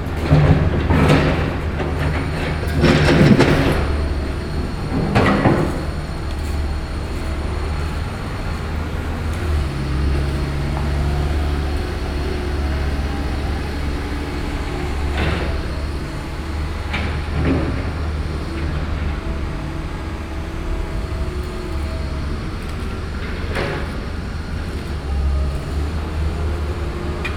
Brussels, Rue des Vieillards, construction site